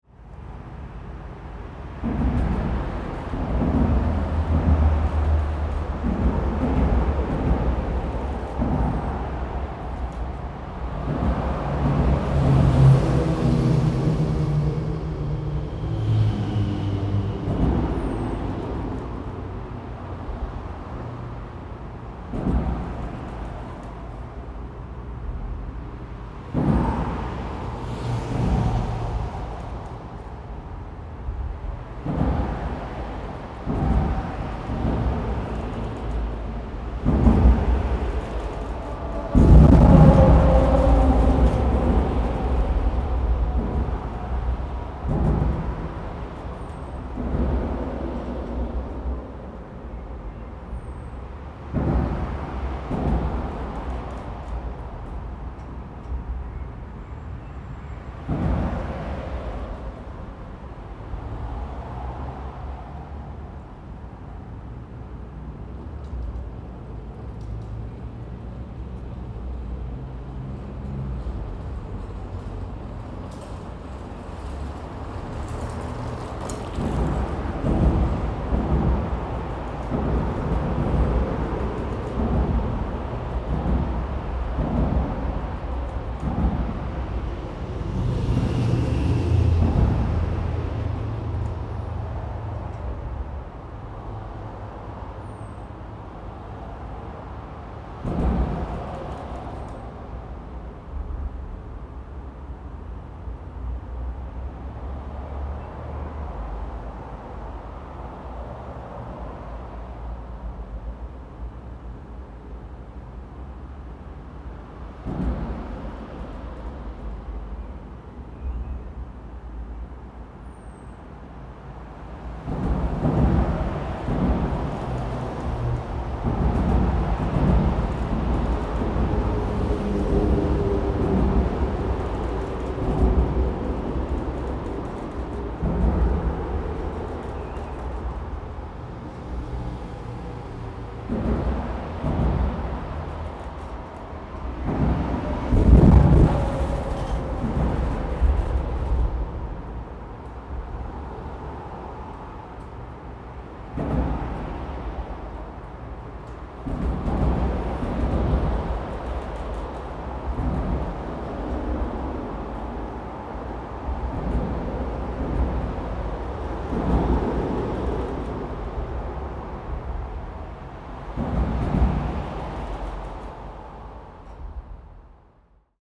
Grunewald, Berlin, Germany - Under the motoway, traffic thumps over a gap in ashpalt
Fine sunny day. The sounds are close above ones head.